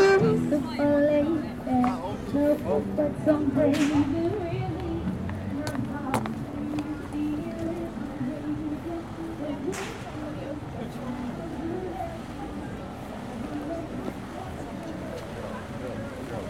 Grafton Street, Dublin, Ireland - A walk up Grafton Street
This recording was made walking up Grafton Street in Dublin. This a pedestrian street, usually quite busy as it is also a main shopping street. There is always lots of activity and especially buskers.
Recording was made with a Zoom H4N.